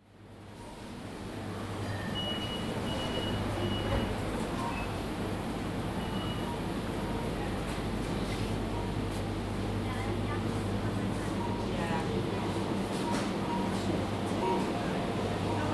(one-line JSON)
{"title": "Waitrose, Bressenden Place, London - Wandering around Waitrose.", "date": "2017-07-21 12:30:00", "description": "I walked around the store to pick up a variety of sounds. Recorded on a Zoom H2n.", "latitude": "51.50", "longitude": "-0.14", "altitude": "13", "timezone": "Europe/London"}